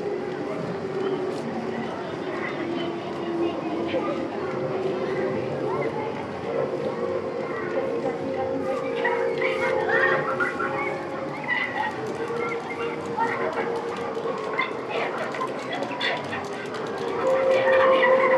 Calle Dietro Il Paludo, Venezia VE, Italien - Venice Biennale - Belgic Pavillion - video installation
At the venice Biennale 2022 - inside the exhibition of the belgic pavillion showing the video installation "the nature of the game" by Francis Alÿs. The sound of children voices performing different games out of several different countries plus visitors in the crowded exhibition hall.
international enviroments and sound- and art scapes